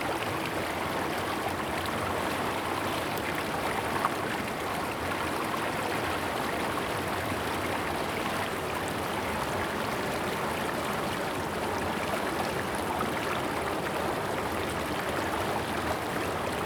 種瓜坑, 成功里, 埔里鎮 - Rivers and stone
Brook, In the river, stream
Zoom H2n MS+XY